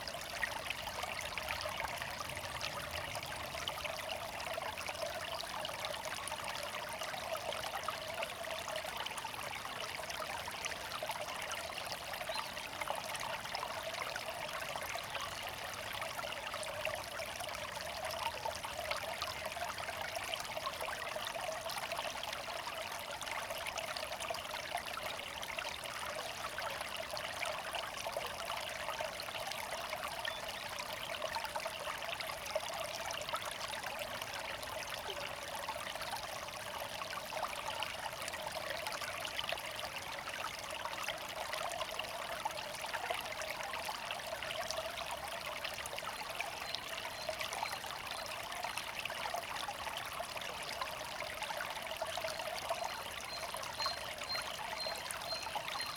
Berlin Buch, Lietzengraben / Graben 30 Hobrechtsfelde - water flow
sound of water flow near confluence of Graben 30 and Liezengraben ditches
(Tascam DR-100 MK3)
Deutschland, 17 April